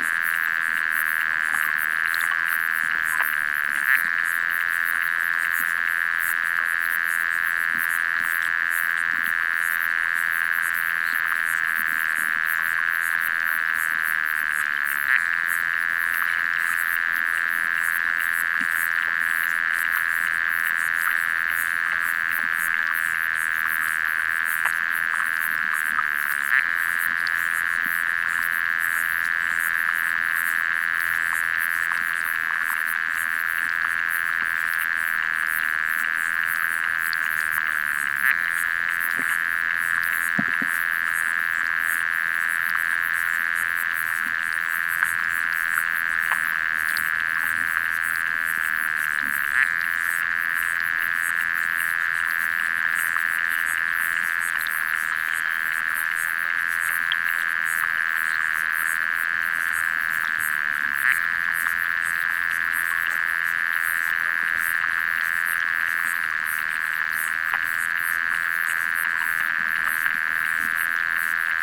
Rubikiai lake, Lithuania, underwater listening
Hydrophone recording in Rubikiai lake.